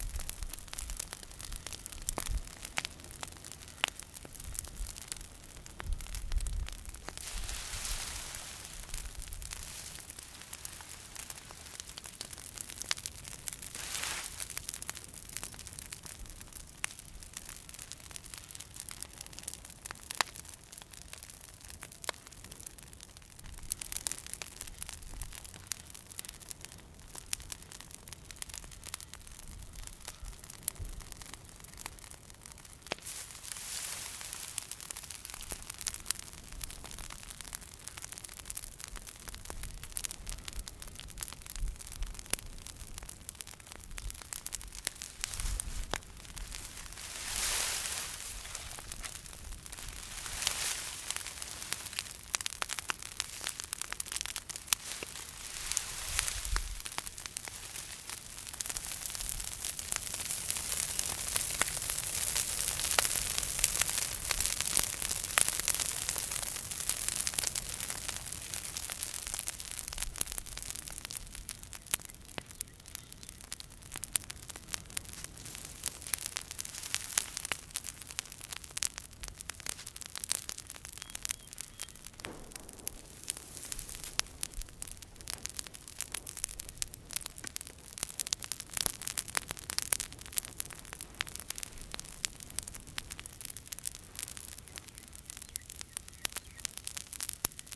Houliao, Fangyuan Township - The sound of fire
Old people are burning dry leaves and branches, Zoom H6